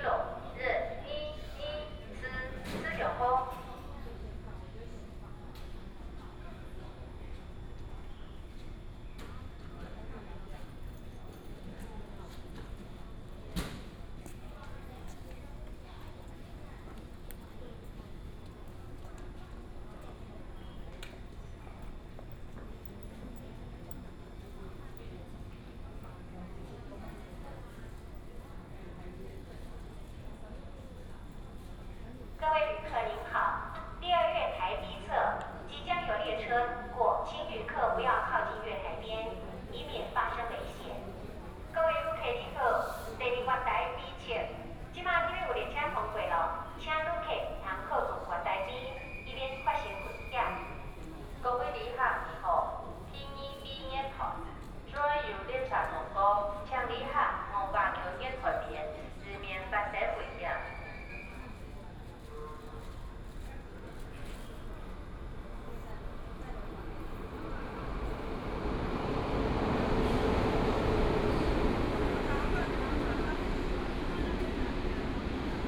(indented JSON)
{
  "title": "竹南火車站, Zhunan Township - in the station platform",
  "date": "2017-03-09 15:20:00",
  "description": "in the station platform, Station information broadcast, The train passes by",
  "latitude": "24.69",
  "longitude": "120.88",
  "altitude": "8",
  "timezone": "Asia/Taipei"
}